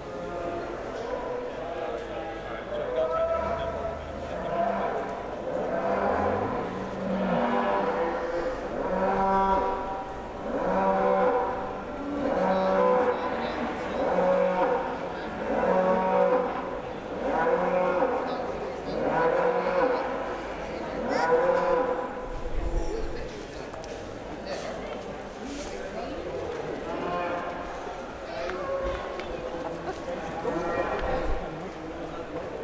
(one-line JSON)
{"title": "Cattle at devon County Show", "date": "2003-05-30 11:06:00", "latitude": "50.71", "longitude": "-3.44", "altitude": "18", "timezone": "Europe/London"}